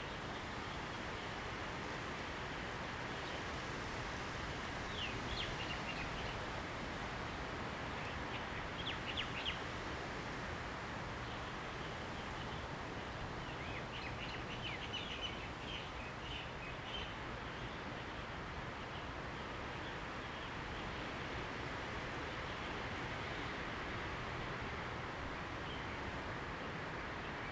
慈湖, Jinning Township - Birds singing
Birds singing, Forest and Wind
Zoom H2n MS+XY